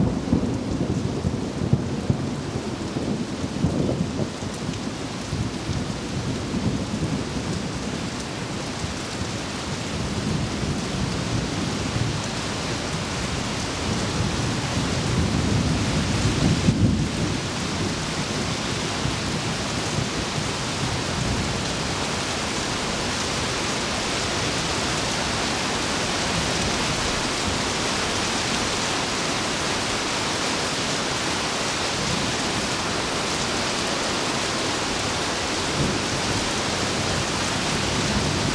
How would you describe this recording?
Thunderstorm, Sony ECM-MS907, Sony Hi-MD MZ-RH1